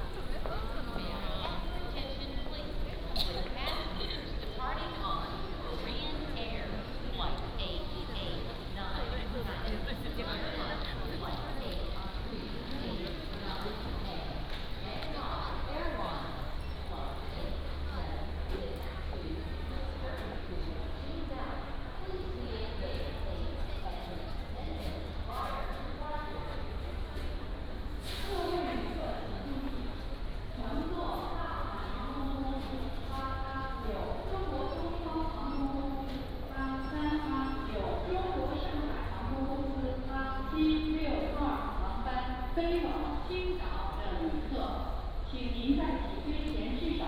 Gonghangjinim-ro, Gangseo-gu, Busan, 韓国 - At the airport
At the airport
18 December 2014, 09:30